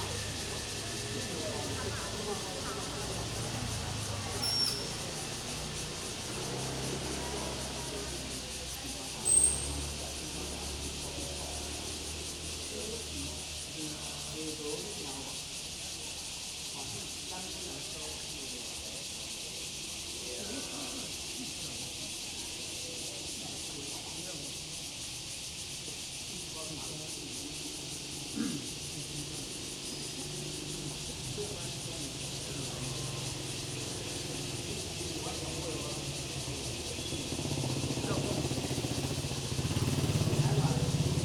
{"title": "Fuyang St., Da’an Dist., Taipei City - at the park entrance", "date": "2015-07-17 07:11:00", "description": "at the park entrance, Many elderly people are doing aerobics, Traffic Sound, Cicadas cry\nZoom H2n MS+XY", "latitude": "25.02", "longitude": "121.56", "altitude": "24", "timezone": "Asia/Taipei"}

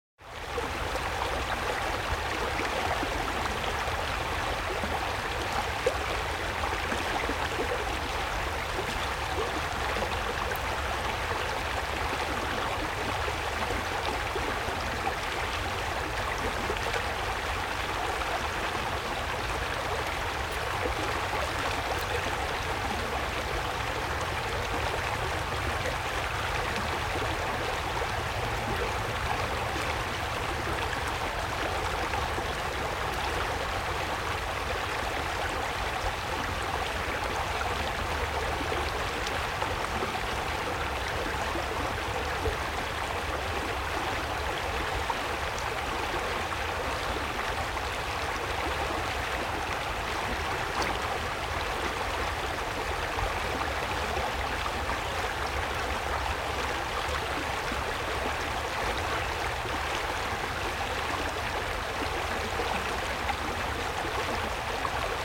{"title": "hinter prex - dreilaendereck", "date": "2009-08-18 17:24:00", "description": "Produktion: Deutschlandradio Kultur/Norddeutscher Rundfunk 2009", "latitude": "50.32", "longitude": "12.10", "altitude": "542", "timezone": "Europe/Berlin"}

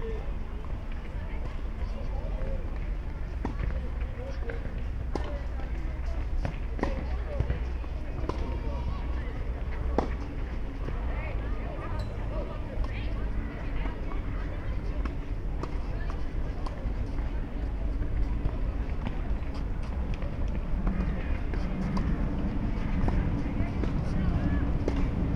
{"title": "Gleisdreieck, Köln - rail triangle, field ambience", "date": "2015-08-20 19:25:00", "description": "Köln, rail triangle, Gleisdreieck, sound of soccer and tennis training, trains.\n(Sony PCM D50, Primo EM172)", "latitude": "50.96", "longitude": "6.94", "altitude": "50", "timezone": "Europe/Berlin"}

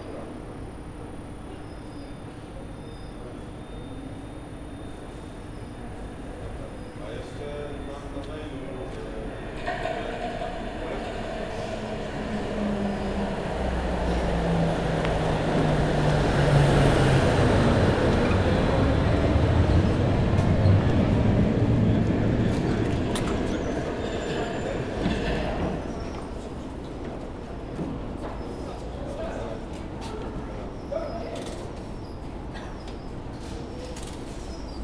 {"title": "Poznań, express tram line, kurpińskiego stop - waiting for my ride", "date": "2012-07-18 10:09:00", "description": "waiting for a tram, old and modern carriages arrive, squeals of young pigeons", "latitude": "52.45", "longitude": "16.92", "altitude": "90", "timezone": "Europe/Warsaw"}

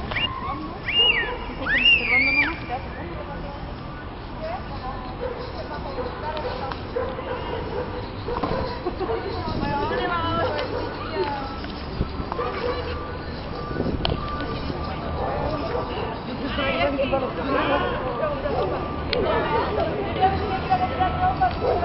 {"title": "Niños jugando", "date": "2011-07-01 07:43:00", "description": "Niós jugando en Plaza Brasil", "latitude": "-33.44", "longitude": "-70.67", "altitude": "549", "timezone": "America/Santiago"}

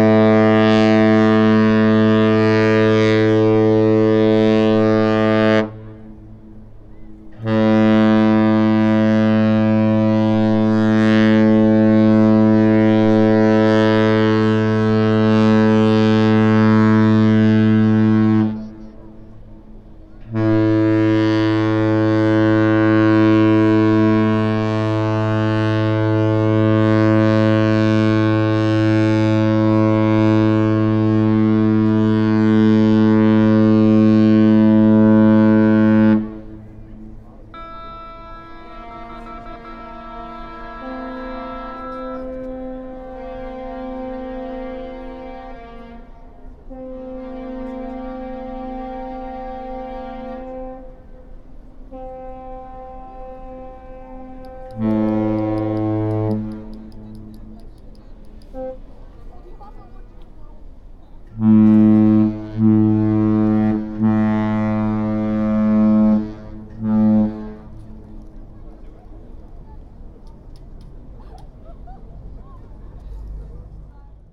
{"title": "warnemünde, westmole - kreuzfahrtschiff läuft aus", "date": "2014-08-23 17:38:00", "description": "warnemünde, westmole: kreuzfahrtschiff läuft aus", "latitude": "54.19", "longitude": "12.09", "timezone": "Europe/Berlin"}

